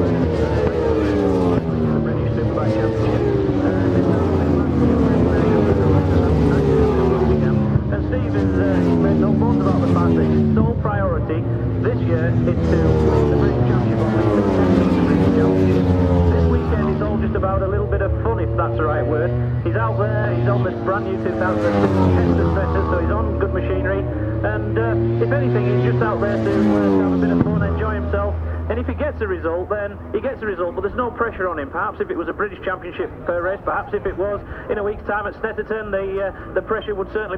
Silverstone Circuit, Towcester, UK - world superbikes 2002 ... qualifying ...
world superbikes 2002 ... qualifying ... one point stereo to sony minidisk ... commentary ... time approximate ... session may have been stopped for bad weather ...
England, UK, 25 June, 11:00